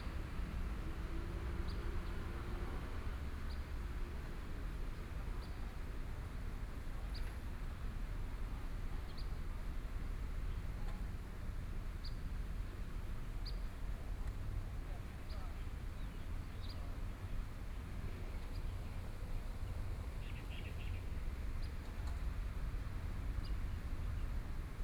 Lotus Pond, Kaohsiung - Standing beside the pool
Sound water-skiing facilities, Birdsong, The sound of water, Traffic Sound
Zuoying District, 新庄仔路2號, 15 May 2014, ~11:00